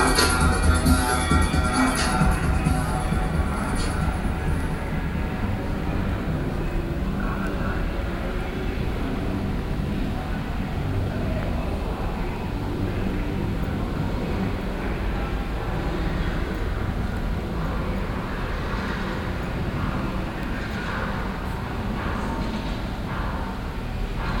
dortmund, phoenix halle, ausstellung hardware medienkunstverein
in grosser ehemaliger industriehalle, medienkunstausstellung, gang durch verschiedene exponate
soundmap nrw
social ambiences/ listen to the people - in & outdoor nearfield recordings